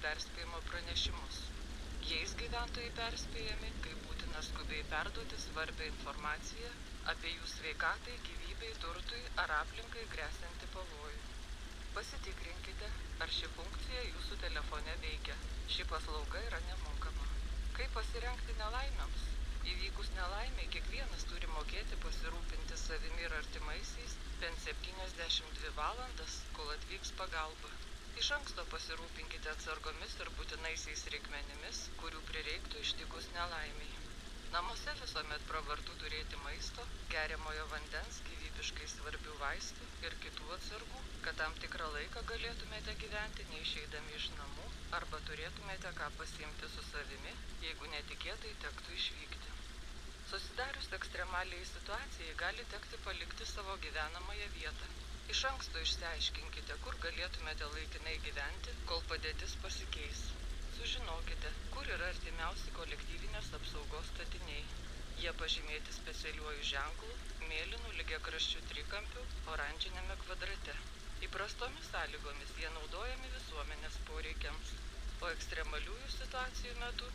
Utena, Lithuania, civil safety sirens
checking alarm system of civil safety. omni mics and fm radio
17 October 2018, ~12:00